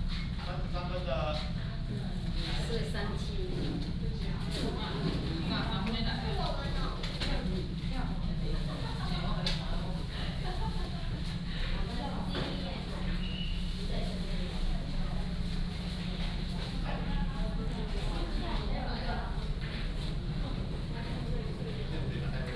Yuli Station, Yuli Township - At the station
At the station, From the station hall, Walked into the station platform, Then go into the car
9 October 2014, ~18:00